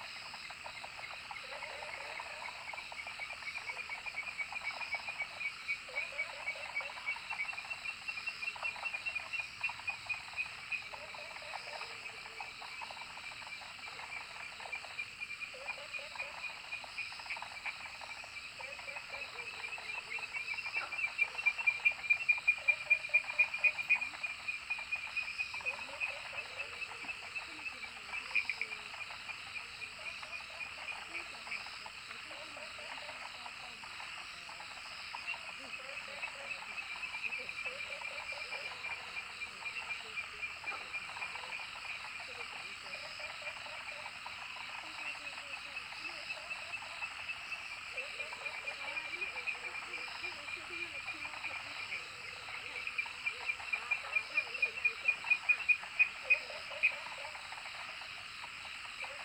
Frogs chirping, Sound of insects, Dogs barking
Zoom H2n MS+XY